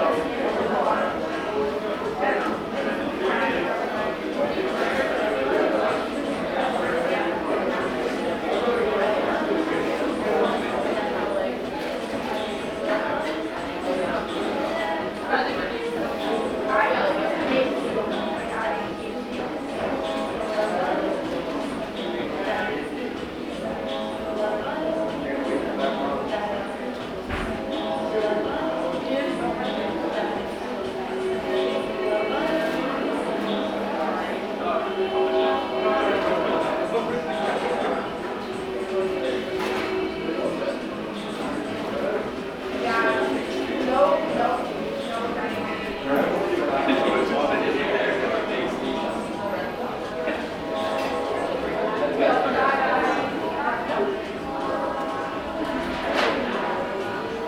{
  "title": "S Clinton St, Iowa City, IA, USA - Downtown chipotle",
  "date": "2022-01-23 08:30:00",
  "description": "radio, conversation, restaurant",
  "latitude": "41.66",
  "longitude": "-91.53",
  "altitude": "215",
  "timezone": "America/Chicago"
}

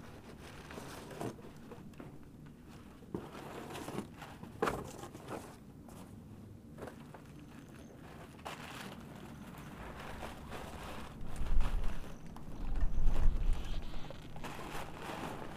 {
  "date": "2018-06-26 11:11:00",
  "description": "WHOA! i didnt know until just now that this recording started at 11:11 !!:!!\nzoomh4npro",
  "latitude": "35.47",
  "longitude": "-105.78",
  "altitude": "2137",
  "timezone": "America/Denver"
}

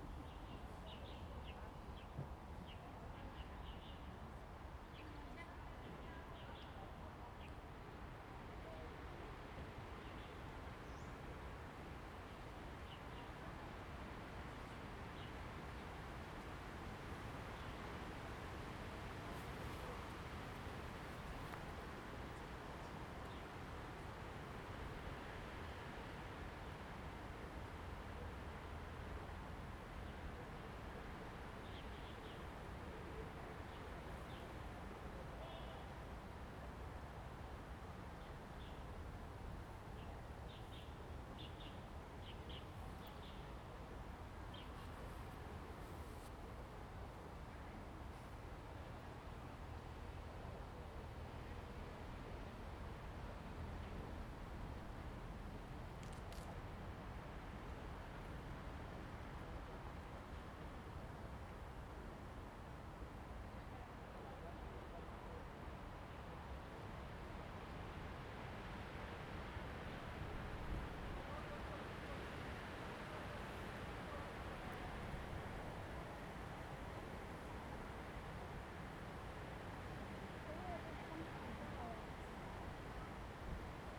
{
  "title": "太武山公園, Kinmen County - in the Park",
  "date": "2014-11-04 15:42:00",
  "description": "In Square Park, Tourists, Birds singing, Wind, Forest\nZoom H2n MS+XY",
  "latitude": "24.46",
  "longitude": "118.40",
  "altitude": "83",
  "timezone": "Asia/Taipei"
}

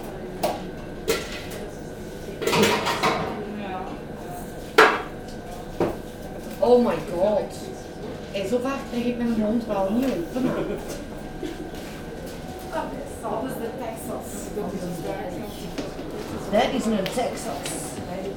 {
  "title": "Riemst, Belgium - t Smullertje chip shop",
  "date": "2017-12-16 19:40:00",
  "description": "Into the 't Smullertje chip chop, waiting for our meal. \"Fritkot\" or \"frituur\" are very popular in Belgium, it's places where you can eat some Belgian fries, and it's so delicious ! In this place, people are speaking dutch.",
  "latitude": "50.79",
  "longitude": "5.63",
  "altitude": "90",
  "timezone": "Europe/Brussels"
}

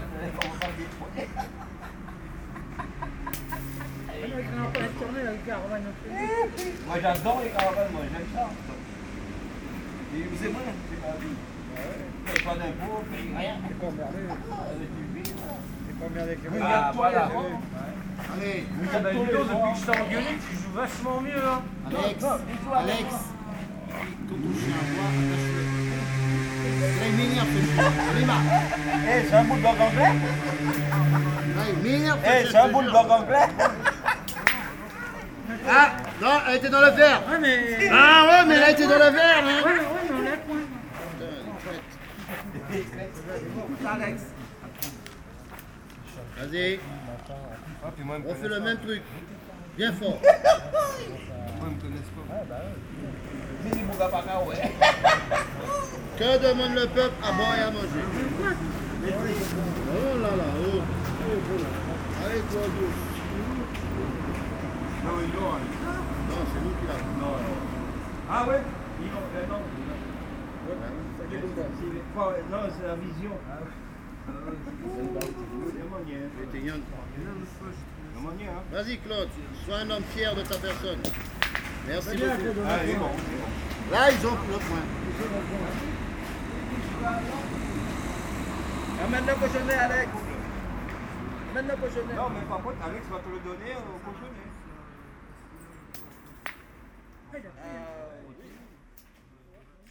{
  "title": "Tours, France - Bowling in a park",
  "date": "2017-08-13 16:40:00",
  "description": "On a small park, a very noisy group plays bowling. To say the least, they are very happy, it's a communicative way of life ! It looks like this group of friends play every sunday like that.",
  "latitude": "47.39",
  "longitude": "0.67",
  "altitude": "52",
  "timezone": "Europe/Paris"
}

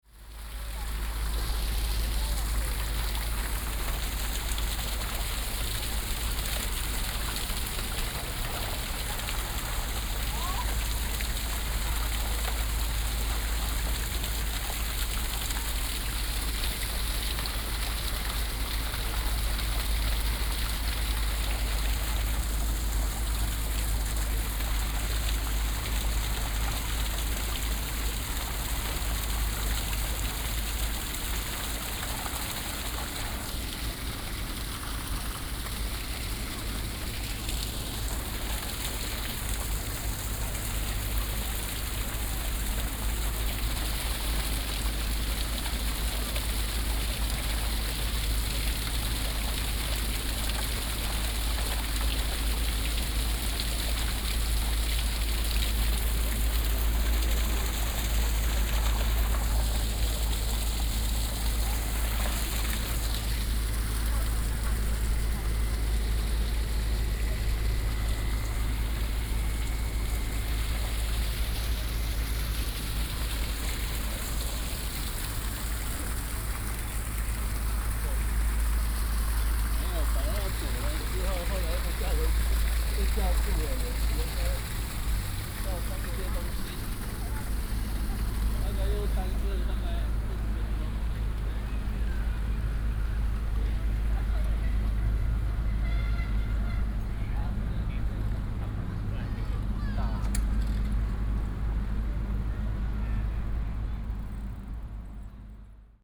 {
  "title": "臺大農場, National Taiwan University - Waterwheel",
  "date": "2016-03-04 17:40:00",
  "description": "in the university, Waterwheel, Sound of water",
  "latitude": "25.02",
  "longitude": "121.54",
  "altitude": "16",
  "timezone": "Asia/Taipei"
}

